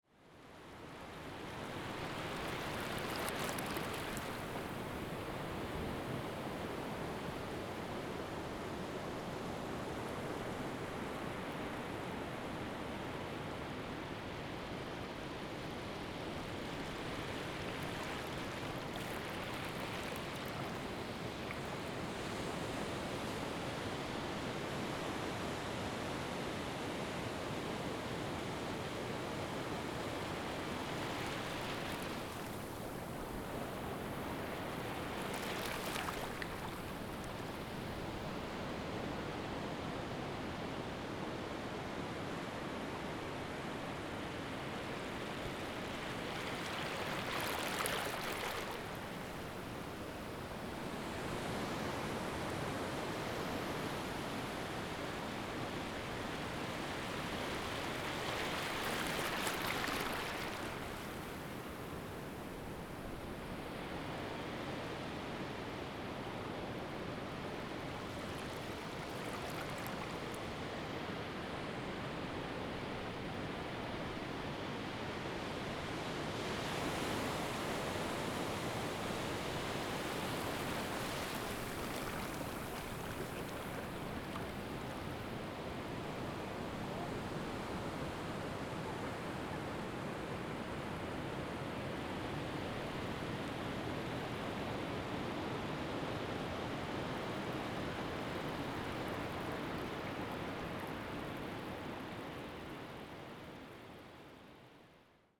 {
  "title": "Odeceixe Beach, Costa Vicentina, Portugal - Low tide in Odeceixe",
  "date": "2017-07-24 09:50:00",
  "description": "Recorded in knee-deep water during low tide at the Odeceixe beach. It was a windy day and the waves were coming from different directions allowing for cross sea. I recorded this with the internal XY mics on the Zoom H2N and a wind sock.",
  "latitude": "37.44",
  "longitude": "-8.80",
  "altitude": "53",
  "timezone": "Europe/Lisbon"
}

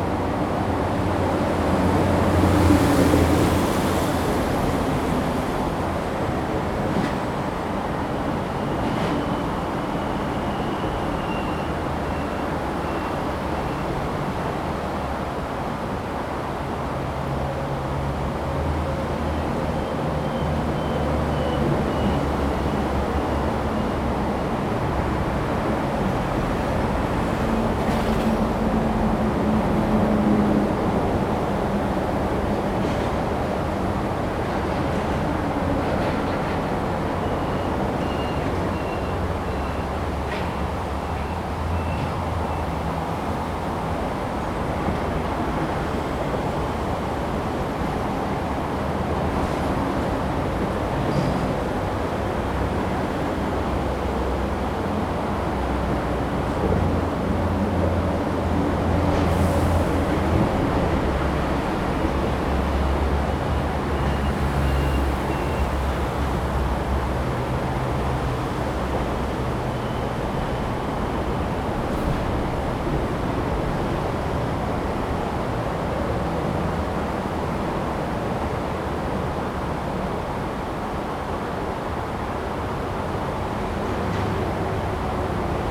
{
  "title": "Dazun Rd., Zhongli Dist. - Under the highway",
  "date": "2017-08-02 14:58:00",
  "description": "Under the highway, stream, traffic sound\nZoom H2n MS+XY",
  "latitude": "24.99",
  "longitude": "121.23",
  "altitude": "109",
  "timezone": "Asia/Taipei"
}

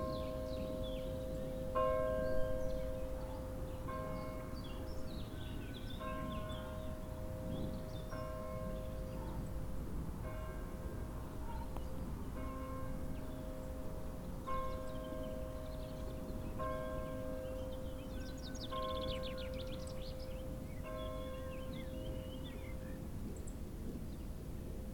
{
  "title": "Monferran-Savès, France - Lockdown 1 km - noon - angelus rings (South)",
  "date": "2020-04-04 12:05:00",
  "description": "Recorded during first lockdown, south of the village.\nZoom H6 capsule xy.\nsun and puddles.",
  "latitude": "43.59",
  "longitude": "0.98",
  "altitude": "175",
  "timezone": "Europe/Paris"
}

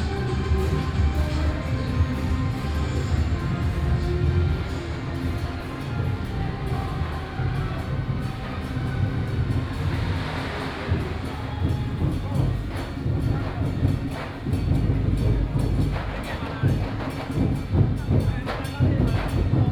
{
  "title": "Daren St., Tamsui Dist., New Taipei City - walking in the Street",
  "date": "2015-06-21 17:31:00",
  "description": "Traditional temple festivals, Firecrackers",
  "latitude": "25.18",
  "longitude": "121.44",
  "altitude": "49",
  "timezone": "Asia/Taipei"
}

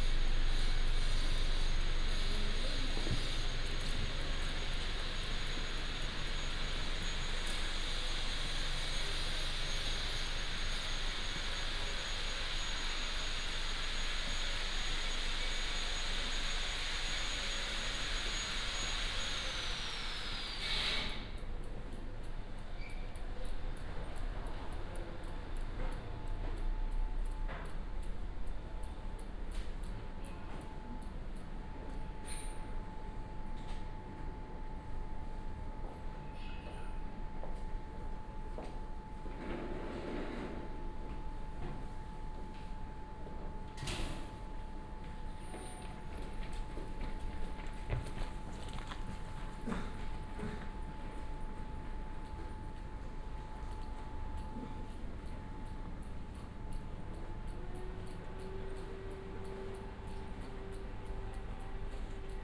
{
  "title": "Pl. Forum de Cardeurs / Rue Venel, Aix-en-Provence, Frankreich - evening ambience, walk",
  "date": "2014-01-06 17:50:00",
  "description": "early Monday evening ambience at Place de Forum des Cardeurs, short walk into Rue Venel\n(PCM D50, OKM2)",
  "latitude": "43.53",
  "longitude": "5.45",
  "altitude": "207",
  "timezone": "Europe/Paris"
}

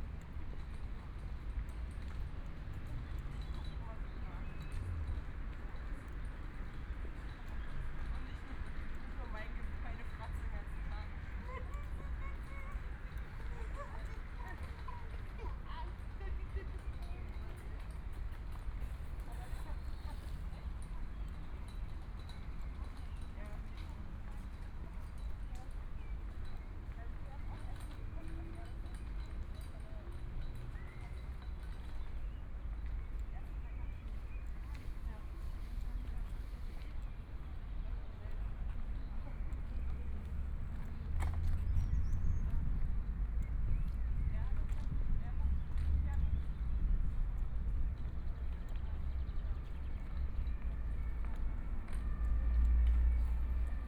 {
  "title": "Altstadt - Lehel, 慕尼黑德國 - in the Park",
  "date": "2014-05-10 19:20:00",
  "description": "in the Park, Birdsong",
  "latitude": "48.15",
  "longitude": "11.58",
  "altitude": "515",
  "timezone": "Europe/Berlin"
}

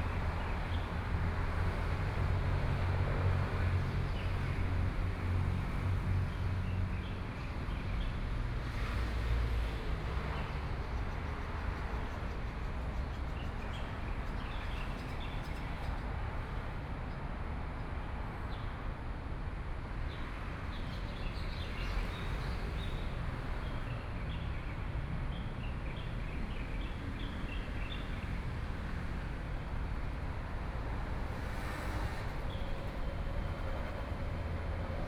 {
  "title": "ZhengShou Park, Taipei City - Sitting in the park",
  "date": "2014-04-04 16:59:00",
  "description": "Sitting in the park, Birds sound, Traffic Sound\nPlease turn up the volume a little. Binaural recordings, Sony PCM D100+ Soundman OKM II",
  "latitude": "25.05",
  "longitude": "121.53",
  "altitude": "17",
  "timezone": "Asia/Taipei"
}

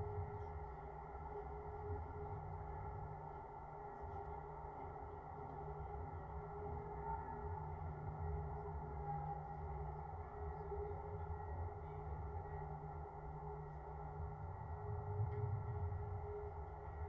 {
  "title": "Schöneberger Südgelände, Berlin, Deutschland - viewpoint platform",
  "date": "2019-03-16 12:05:00",
  "description": "viewpoint platform within a small nature preserve, Schöneberger Südgelände park, contact microphones attached to the metal construction, wind and passing by trains\n(Sony PCM D50, DIY contact mics)",
  "latitude": "52.47",
  "longitude": "13.36",
  "altitude": "48",
  "timezone": "Europe/Berlin"
}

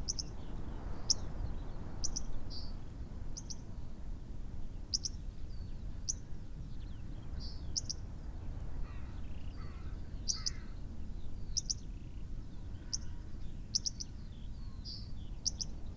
{
  "title": "Green Ln, Malton, UK - corn bunting ... call ... song ...",
  "date": "2021-04-11 07:27:00",
  "description": "corn bunting ... call ... song ... xlr SASS to zoom h5 ... bird calls ... song ... yellowhammer ... crow ... skylark ... linnet ... pheasant ... blackbird ... very windy ... snow showers ... taken from unattended extended unedited recording ...",
  "latitude": "54.12",
  "longitude": "-0.56",
  "altitude": "89",
  "timezone": "Europe/London"
}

